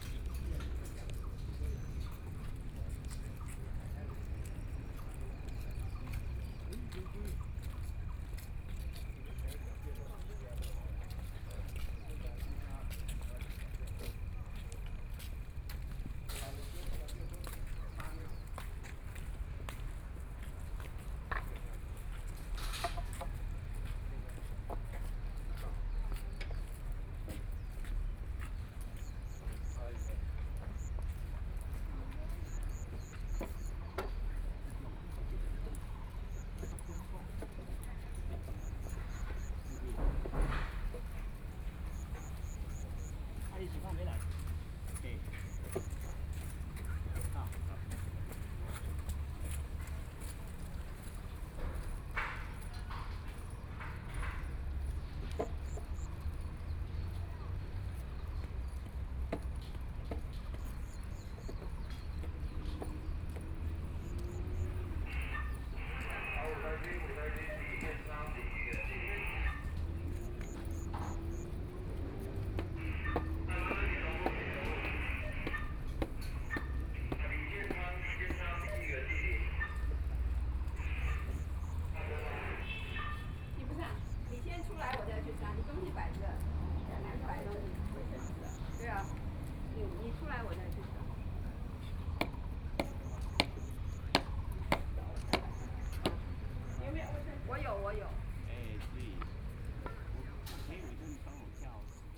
BiHu Park, Taipei City - Construction works of art
Construction works of art, Aircraft flying through, Walking to and from the sound of the crowd
Taipei City, Taiwan